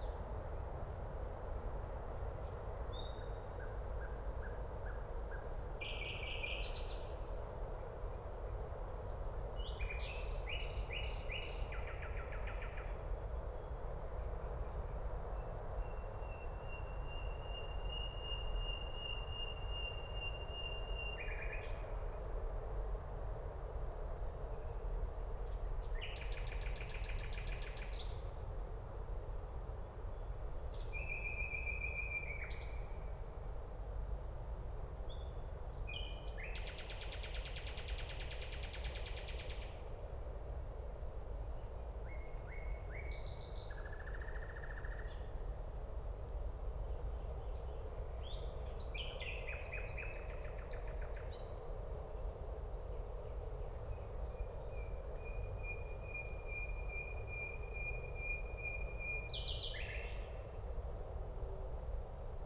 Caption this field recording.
park ambience with a quite elaborated nightingale, distant traffic noise, (Sony PCM D50, DPA4060)